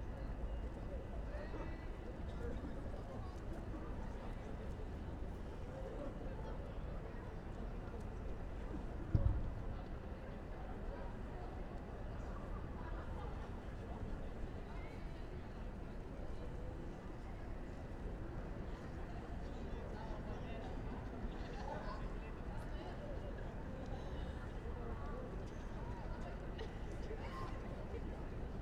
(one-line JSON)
{"title": "book fair 2016, Vilnius, Lithuania", "date": "2016-02-26 13:10:00", "latitude": "54.68", "longitude": "25.22", "altitude": "92", "timezone": "Europe/Vilnius"}